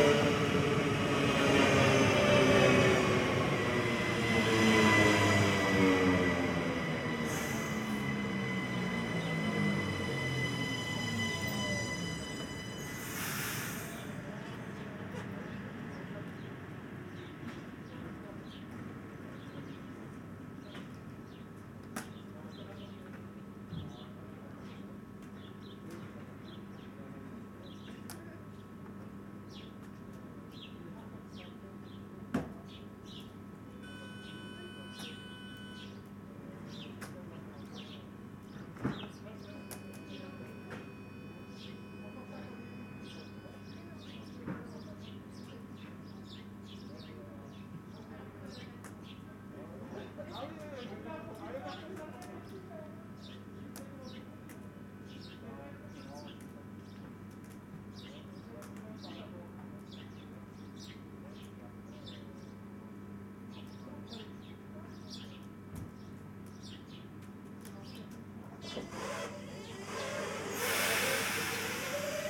Trains passing by, voice announcements.
Tech Note : Ambeo Smart Headset binaural → iPhone, listen with headphones.